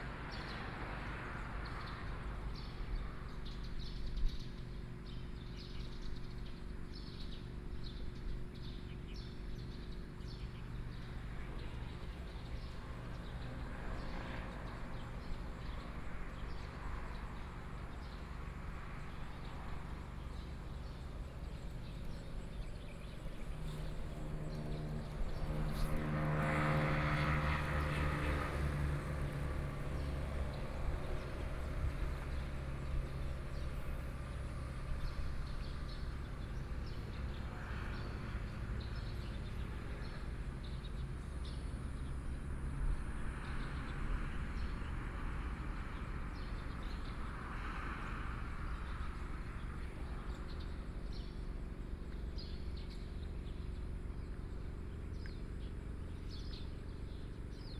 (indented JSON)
{
  "title": "美農村, Beinan Township - Birdsong",
  "date": "2014-09-07 07:17:00",
  "description": "In the morning, Birdsong, Traffic Sound",
  "latitude": "22.84",
  "longitude": "121.09",
  "altitude": "189",
  "timezone": "Asia/Taipei"
}